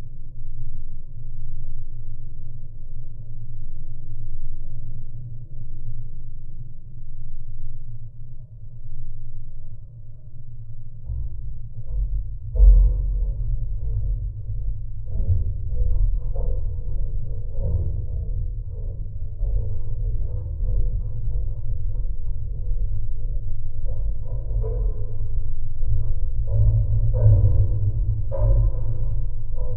Ukmergė, Lithuania, hilltop fence
metallic fence on Ukmerge hilltop. low frequencies, geophone.